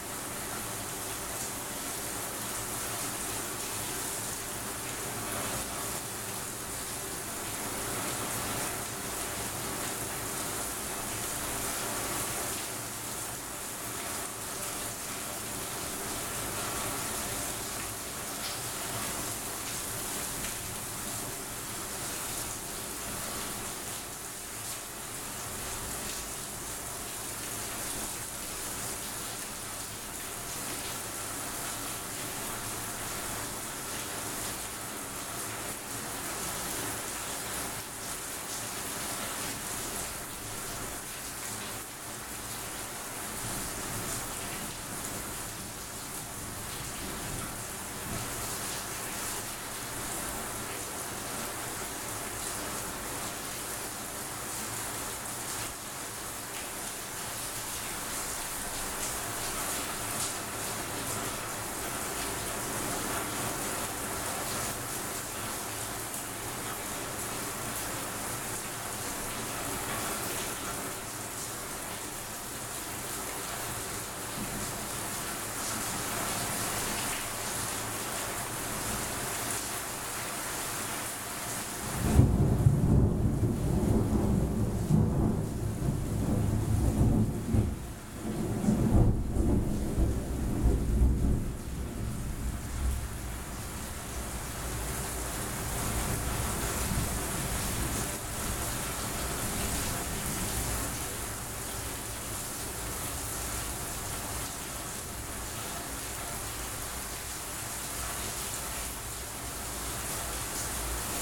almost distant storm soundscape with rain, São Sebastião da Grama - SP, Brasil - almost distant storm soundscape with rain
This soundscape archive is supported by Projeto Café Gato-Mourisco – an eco-activism project host by Associação Embaúba and sponsors by our coffee brand that’s goals offer free biodiversity audiovisual content.
5 April 2022, 18:46